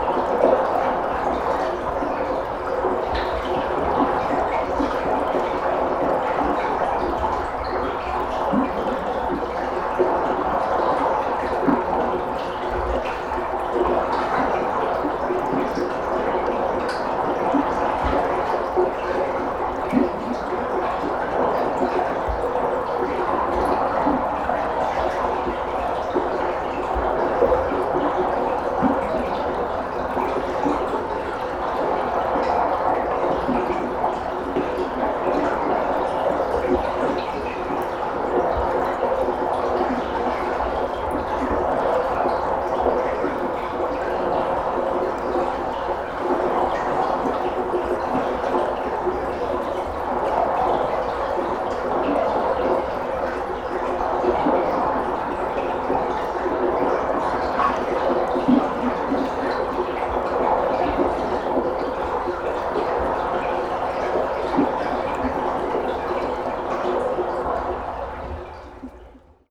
Morasko, Polarna road - concrete trench
water flow recorded at an outlet of a big pipe. it's the first time i saw water coming out ot it. thought it was remains of an inactive grid. (sony d50)